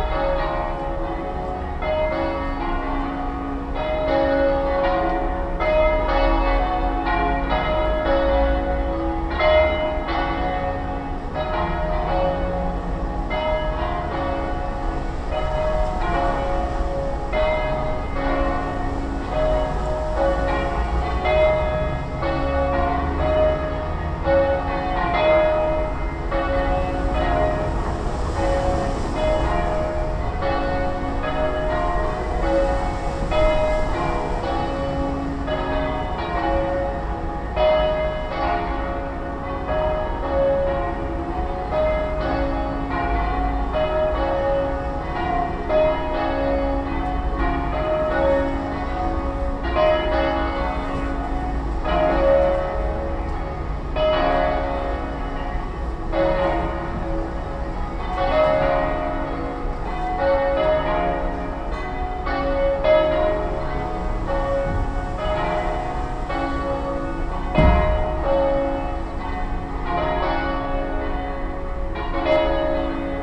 Montagnana Province of Padua, Italy - easter-bells campane-da-pasqua osterglocken
osterglocken, abends; easter bells in the evening; campane da pasqua, sera
April 2012